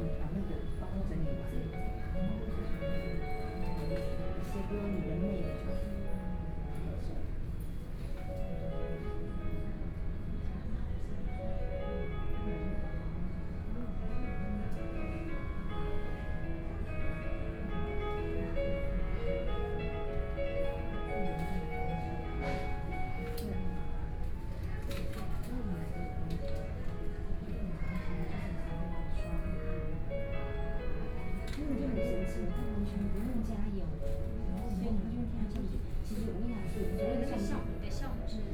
Woman in fast food, Sony PCM D50 + Soundman OKM II